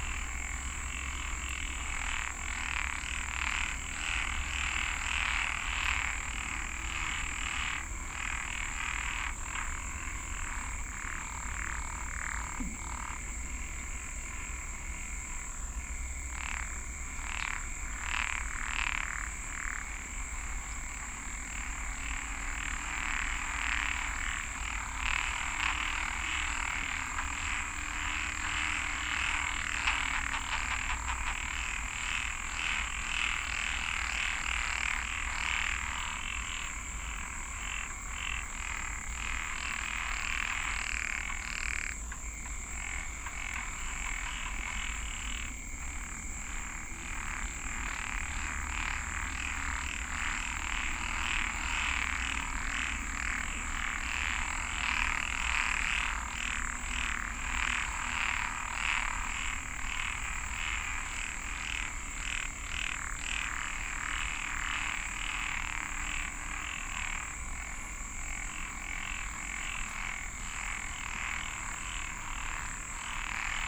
Rainy season at 상중도 습지 (Sang Jung-do marsh)
...after a long dry period there are some summer rain events in Gangwon-do...the days of rain stir amphibian activity in the small remnant wetland...still, the water level has dropped due to the nearby 위엄 dam responding to summer electricity demand in nearby Seoul...